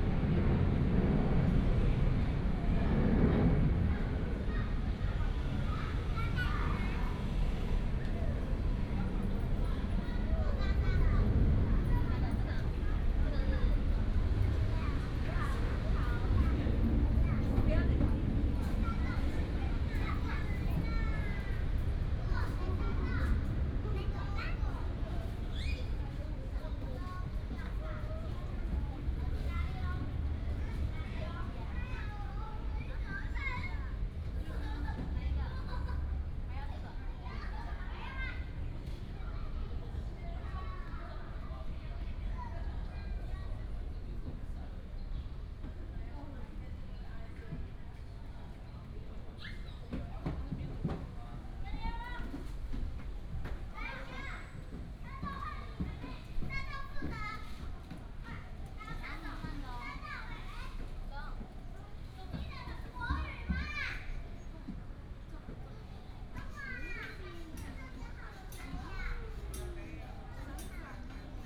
大同公園, Datong Dist., Taipei City - in the Park
in the Park, Child, The plane flew through, Traffic sound
Taipei City, Taiwan